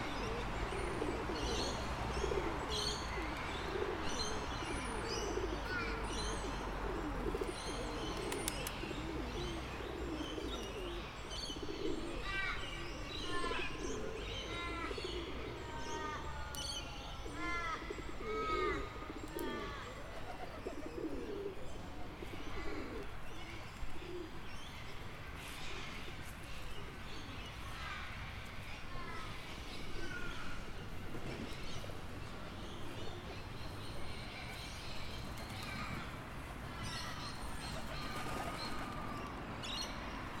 Pitt St, Waterloo NSW, Australia - Crows and pigeons
Crows and pigeons feeding and flocking around the Waterloo Housing Estate, recorded with a Zoom pro mic.
New South Wales, Australia